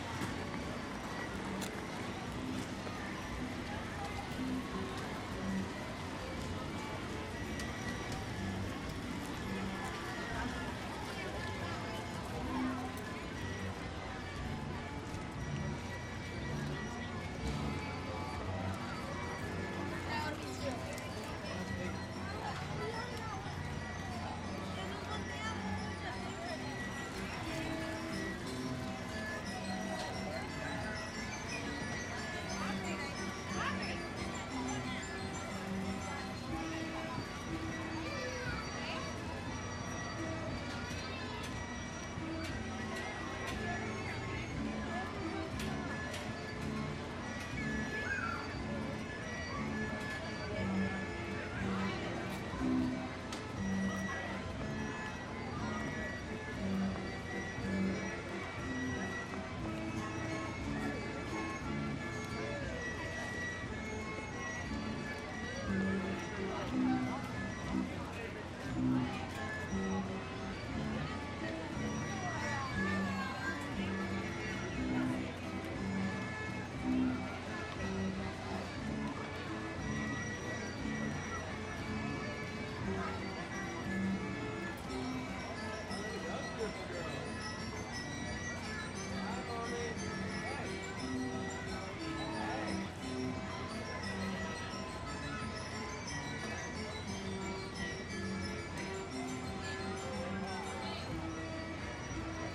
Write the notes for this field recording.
Rides, games and carnival barkers, stationed northwest of the grandstand. Stereo mics (Audiotalaia-Primo ECM 172), recorded via Olympus LS-10.